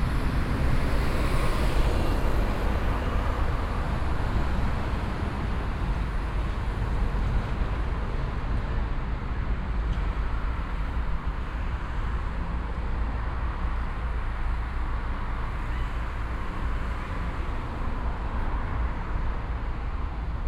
essen, berne street, traffic
At the Berne street on a small green island - Traffic passing by from both directions.
Projekt - Klangpromenade Essen - topographic field recordings and social ambiences
8 June 2011, ~22:00